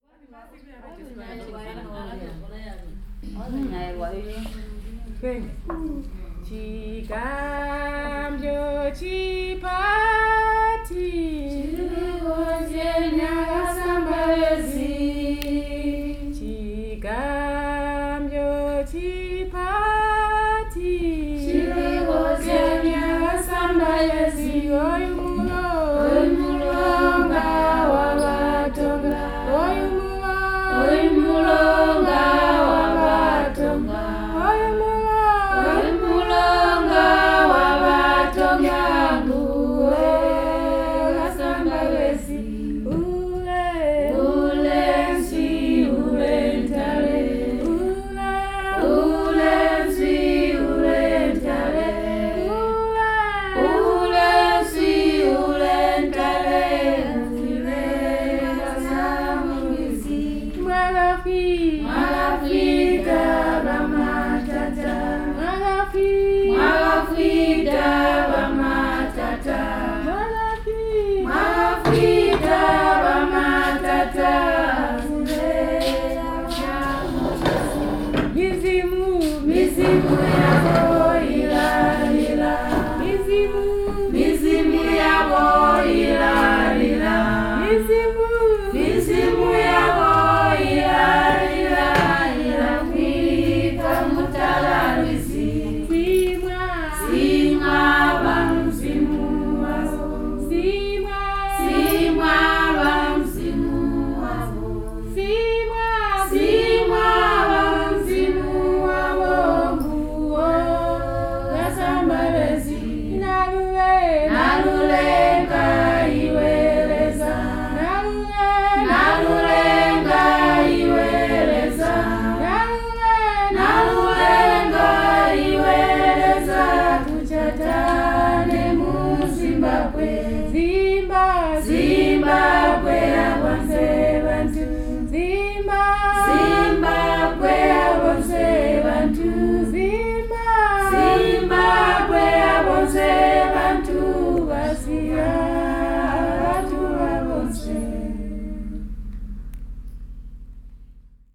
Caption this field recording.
… this recording is due to a special request by myself towards the end of our workshop on documentation skills… I told the story of listening to and recording the Batonga Anthem during an award ceremony at Damba Primary School in 2012… and I asked the women if they can sing it… "the river belongs to the Tonga people... our ancestors are crying...", you may listen to the Batonga Anthem sung by the school children of Damba: